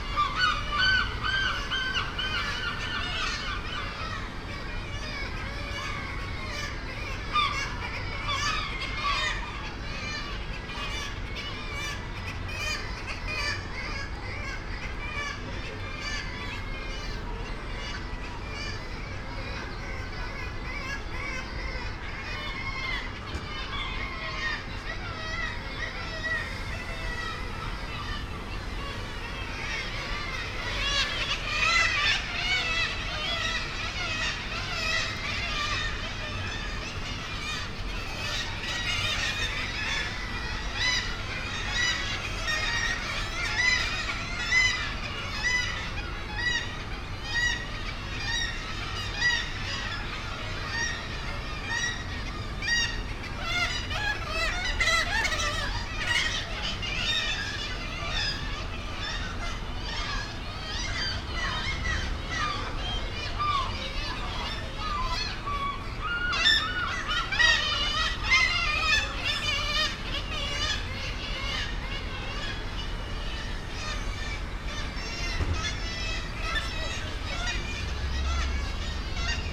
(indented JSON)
{
  "title": "St Nicholas Cliff, Scarborough, UK - kittiwakes at the grand hotel ...",
  "date": "2019-07-25 10:12:00",
  "description": "kittiwakes at the grand hotel ... SASS ... bird calls ... herring gull ... background noise ... voices ... footfall ... traffic ... boats leaving the harbour ... air conditioning units ... almost a month since the last visit ... the ledges etc are very cramped now ... the young are almost as big as the adults ... many are enthusiastic wing flappers exercising their wings ...",
  "latitude": "54.28",
  "longitude": "-0.40",
  "altitude": "36",
  "timezone": "Europe/London"
}